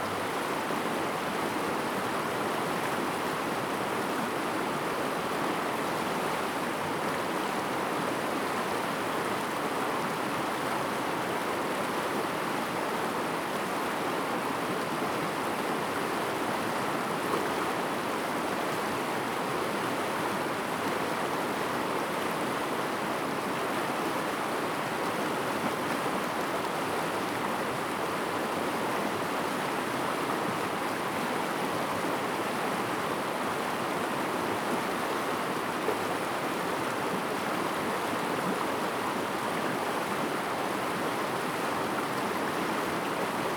金崙溪, Liqiu, Jinfeng Township - In the river bed
stream sound, In the river bed
Zoom H2n MS+XY
Taitung County, Jinfeng Township, 金崙林道, 1 April 2018